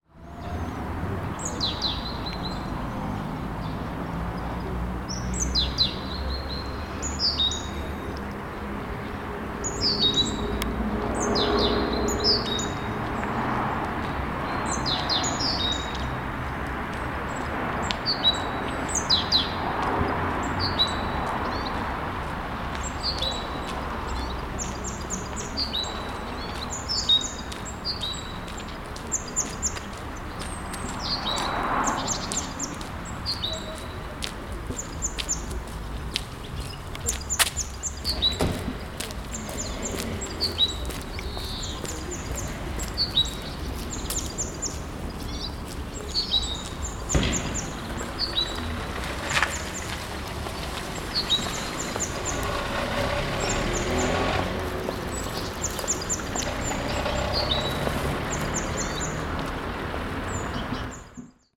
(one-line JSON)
{"title": "проспект Ломоносова, Костянтинівка, Донецька область, Украина - Пение птиц", "date": "2019-03-25 07:41:00", "description": "Пение птиц, шум улицы, звук шин", "latitude": "48.52", "longitude": "37.68", "altitude": "117", "timezone": "Europe/Kiev"}